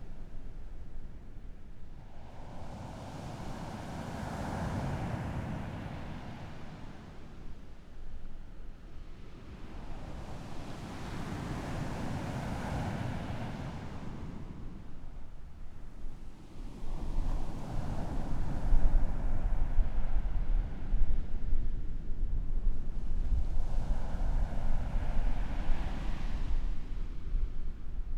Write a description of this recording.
Sound of the waves, Zoom H4n+ Rode NT4